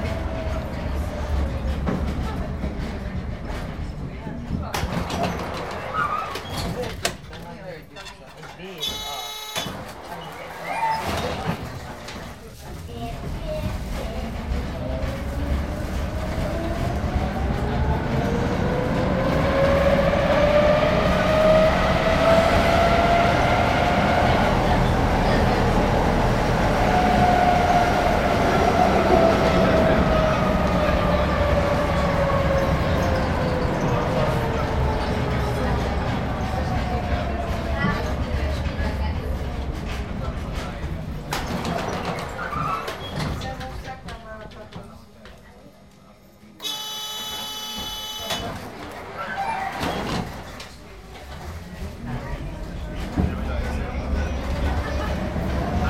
budapest, inside a city tram
inside a city tram, constantly run with some stops and background conversations
international city scapes and social ambiences
Magyarország, European Union